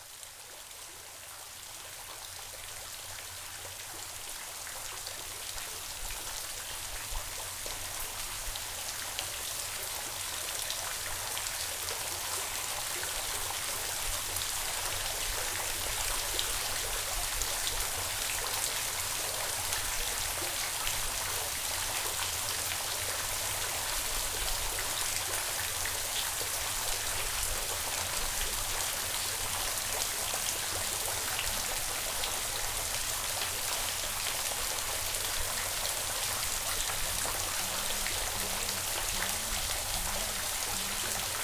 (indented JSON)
{
  "title": "Rd To Fontana Amoroza, Neo Chorio, Cyprus - Aphrodites Bath",
  "date": "2019-01-18 16:16:00",
  "description": "What better way to relax after the walk around Aphrodite's Trail with extraordinary views, giant and 500 year old trees and the panorama from Mutti tis Sotiras? A short, immersive (not literally, sadly) moment of listening to the polyphony of watery sounds in the natural fissure and cave that is the bath place of Aphrodite. An extraordinary complex sound scene with drips and flows, close by and resonating along with a distant but very definite conversation between two birds (pigeons?) maybe the souls of Aphrodite and Adonis? I used a pair of Roland binaural mics on either side of the branch of a tree that looked directly into the bathing place to an Olympus (how appropriate) LS5",
  "latitude": "35.06",
  "longitude": "32.34",
  "altitude": "52",
  "timezone": "Asia/Nicosia"
}